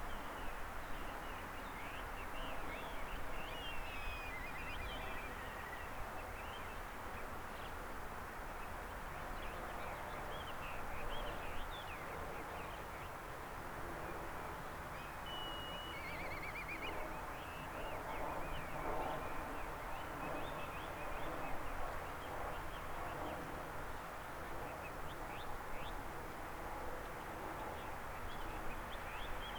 Ling Kok Shan, Unnamed Road, Lamma Island, Hong Kong - Ling Kok Shan
Ling Kok Shan, with a height of 250m, located at the east part of Lamma Island, getting famous of its strange rocks. You can hear different kinds of bird and hawks calls, as well as some plane flying over.
菱角山高250米位於南丫島東部，有不少奇岩怪石。你可聽到不同鳥類和鷹的叫聲，以及飛機聲。
#Bird, #Hawk, #Eagle, #Seagull, #Crow, #Cricket, #Plane, #Wind